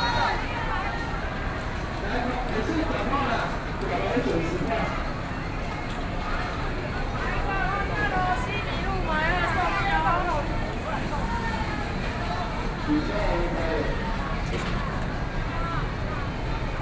Walking through the market
Sony PCM D50+ Soundman OKM II